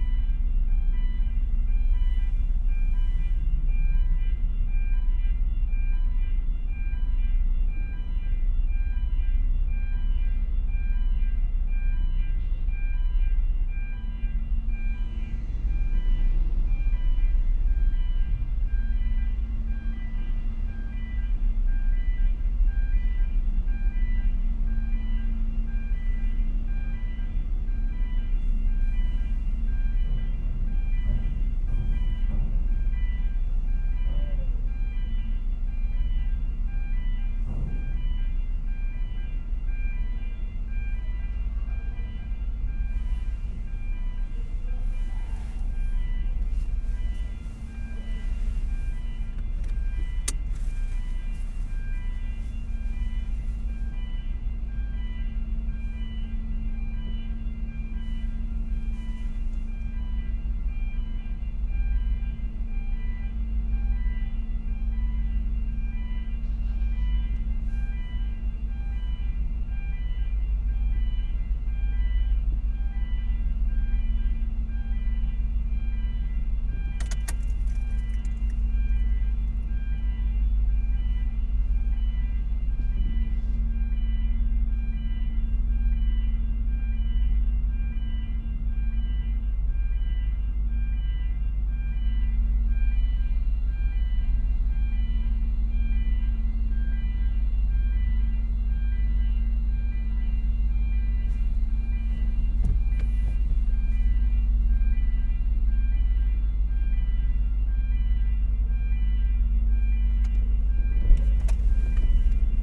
Pier Office, Glenegedale, Isle of Islay, UK - ferry ... leaving ...
Kennecraig to Port Ellen ferry to Islay ... disembarking ... lavalier mics clipped to baseball cap ...
19 May 2018, 09:20